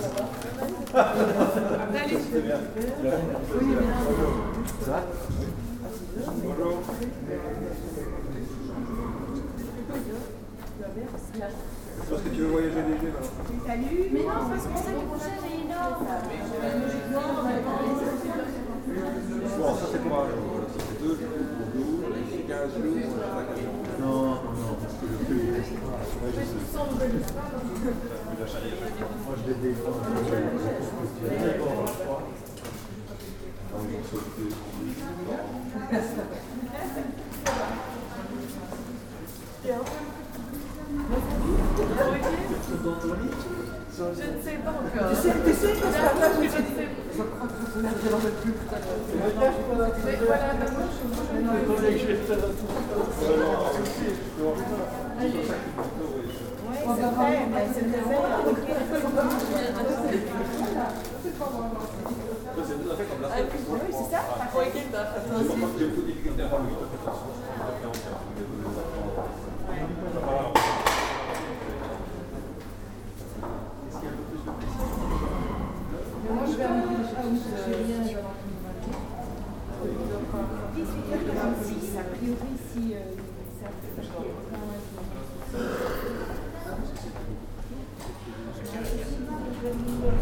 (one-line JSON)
{"title": "Ottignies-Louvain-la-Neuve, Belgique - Ottignies station", "date": "2016-03-05 08:05:00", "description": "The Ottignies station on a saturday morning. Scouts are going on hike to the sea. Bags are heavy and parents are saying good-bye.", "latitude": "50.67", "longitude": "4.57", "altitude": "69", "timezone": "Europe/Brussels"}